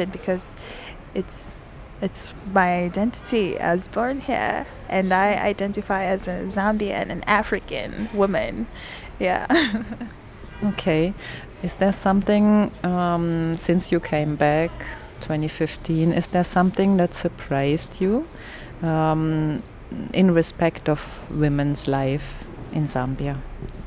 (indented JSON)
{
  "title": "Arcades, Cnr Great East Road, Lusaka, Zambia - Grace Kubikisha tells her story as a Zambian woman",
  "date": "2018-12-07 15:30:00",
  "description": "I met with Grace Kubikisha to interview her about participating in and contributing to the WikiWomenZambia project. here’s the very beginning of our conversation in which Grace pictures for us very eloquently aspects of life for women in urban Zambia... Grace herself is now partnering with her mum in business after studying and working abroad for quite a number of years…\nthe entire interview with Grace Kubikisha can be found here:",
  "latitude": "-15.39",
  "longitude": "28.32",
  "altitude": "1253",
  "timezone": "Africa/Lusaka"
}